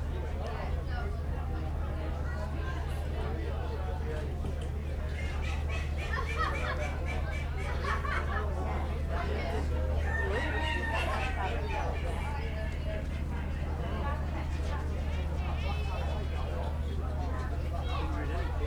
Burniston, UK - Fur and Feather ... Burniston and District Show ...
Fur and Feather tent ... walking round ... lavalier mics clipped to baseball cap ... calls from caged birds ... people talking ...
29 August